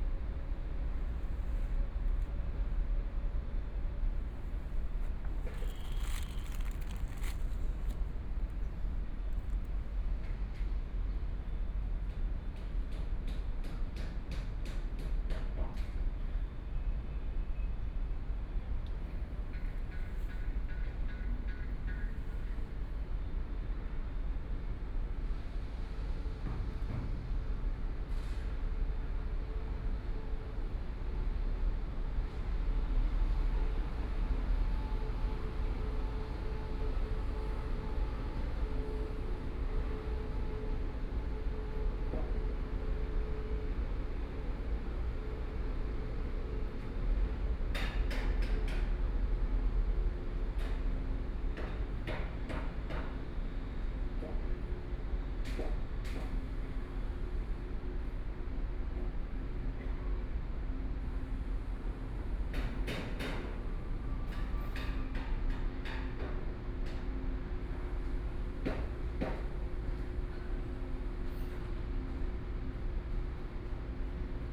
Taichung Station - Next to the station
Station broadcast messages, Railway Construction, Birdsong, Zoom H4n + Soundman OKM II
Dong District, Taichung City, Taiwan